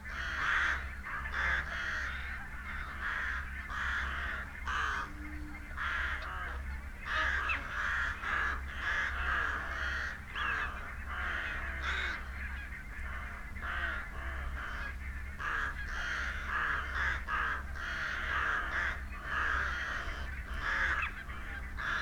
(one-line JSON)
{"title": "Dumfries, UK - covid soundscape ...", "date": "2022-01-30 07:56:00", "description": "covid soundscape ... dummy head with in ear binaural luhd mics to olympus ls 14 ... folly pond ... bird calls from ... rook ... jackdaw ... crow ... wigeon ... whooper ... mute swans ... barnacle ... canada ... pink-footed geese ... teal ... mallard ... wren ... chaffinch ... pheasant ... unattended extended time edited recording ... background noise ...", "latitude": "54.98", "longitude": "-3.48", "altitude": "8", "timezone": "Europe/London"}